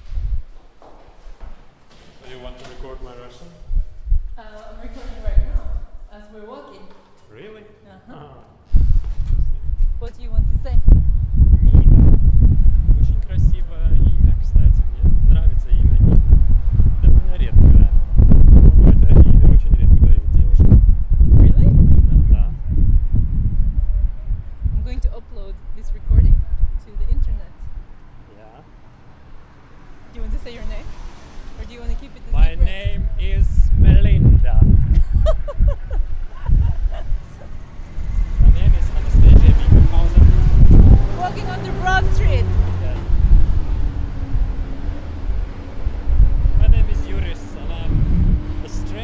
Columbus College of Arts & Design, walking two blocks south to get a sandwich
OH, USA